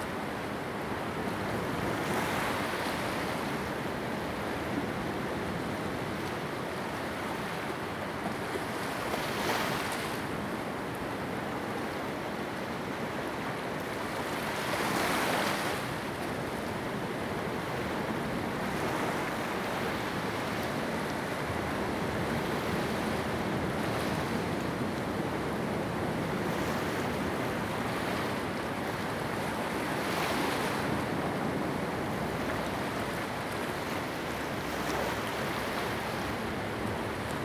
石門區德茂里, New Taipei City - Sound of the waves
at the seaside, Sound of the waves
Zoom H2n MS+XY
New Taipei City, Taiwan, 17 April 2016